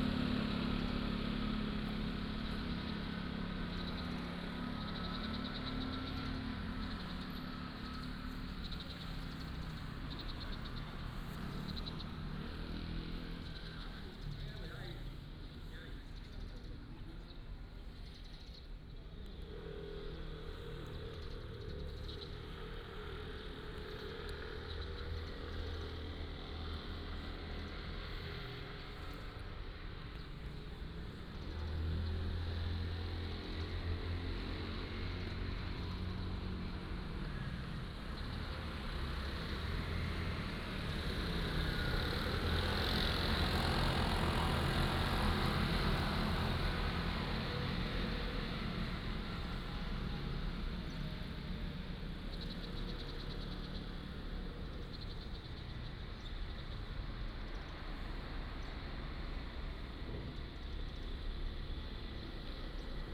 東衛里, Magong City - in front of the temple
In the square, in front of the temple, Traffic Sound, Birds singing
Penghu County, Magong City, 22 October 2014, 07:32